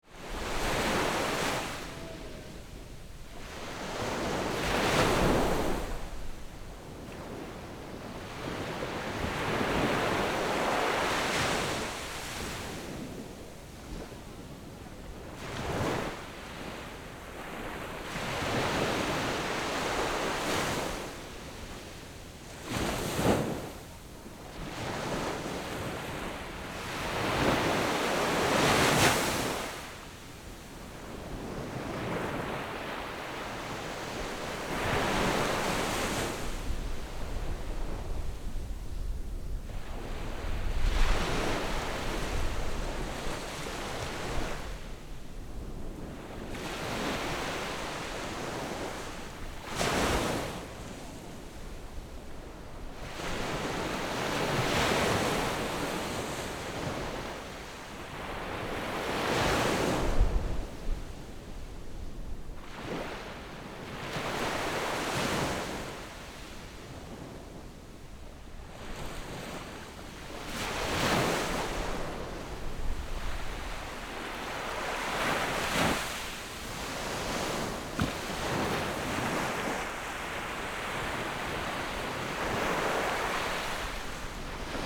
山水沙灘, Magong City - At the beach
At the beach, Sound of the waves
Zoom H6 Rode NT4